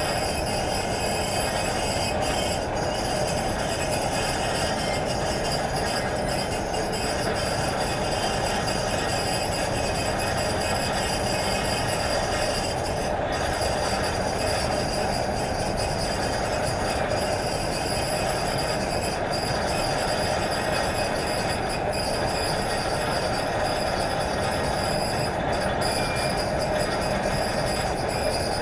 Rumelange, Luxembourg - Merzbow conveyor
Is this a Merzbow concert ? No no, missed ! This is an old rusty conveyor.
24 May 2015, 21:00